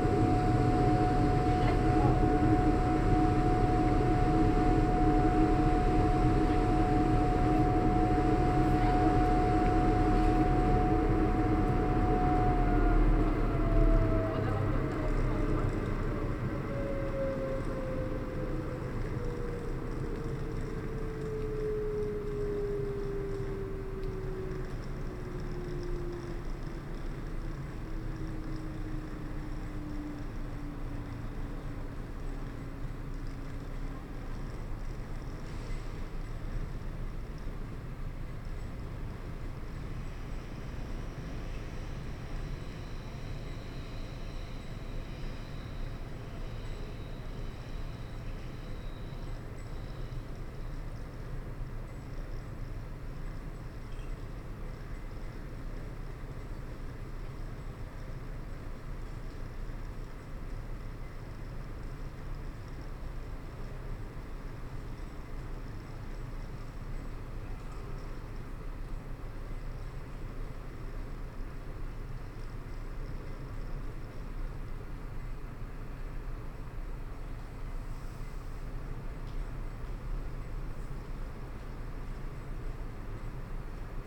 Mitte, Berlin, Germany - schienenschleiffahrzeug
ein schienenschleiffahrzeug der berliner verkehrsgesellschaft faehrt vorbei.
a rails grinding vehicle of the berlin public transport company passing by.
automezzo del trasporto pubblico berlinese che trascina binari del tram.